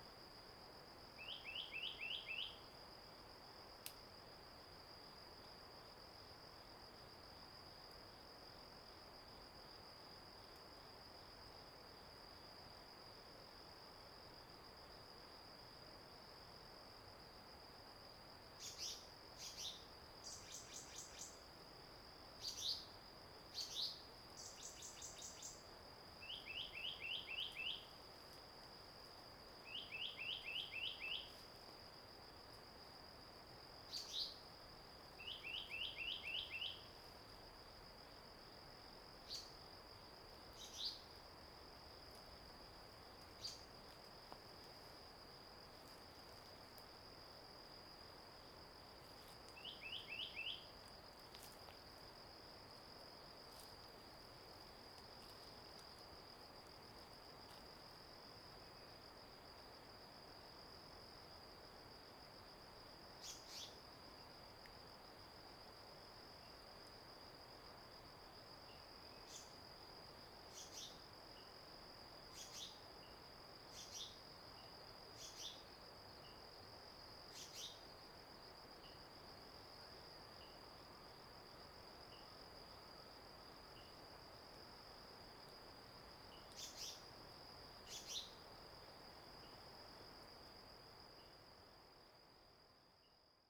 土坂, 達仁鄉台東縣, Taiwan - early morning
early morning in the mountains, Bird song, Insect noise, Stream sound
Zoom H2n MS+XY